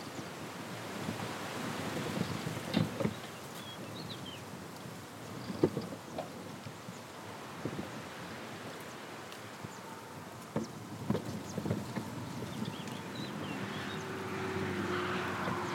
Cantanhede, Portugal, Portugal - The sound of an metal signpost
The sound of wind in the vegetation and an old metal signpost announcing the name of a vine field.
Cantanhede, Coimbra, Portugal, April 2022